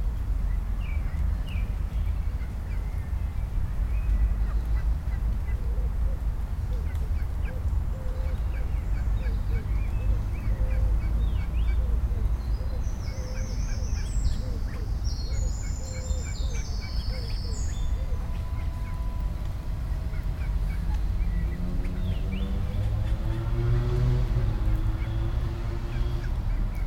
refrath, stadtpark, teich an dolmannstrasse - refrath, stadtpark, teich an dolmanstrasse
mittags an kleinem stadtteich, regentropfen aus dichtem blätterdach, eine kleine gruppe junger stadtdomestizierter zwitschernder enten
soundmap nrw - social ambiences - sound in public spaces - in & outdoor nearfield recordings